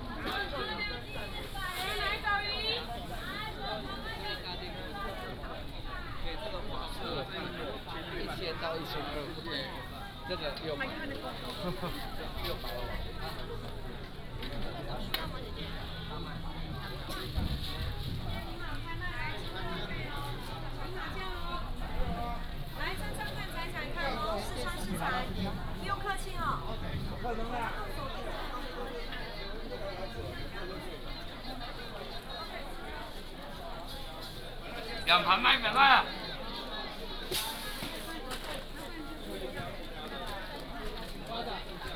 Walking in the traditional market, Market selling sound